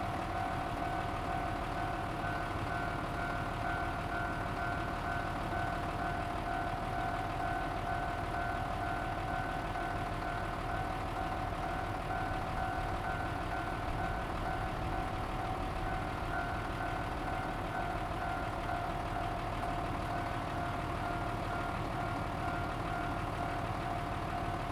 Linhai Rd., Yuanli Township - in the railroad crossing
Traffic sound, The train runs through, Next to the tracks, in the railroad crossing, Bird sound
Zoom H2n MS+XY +Spatial audio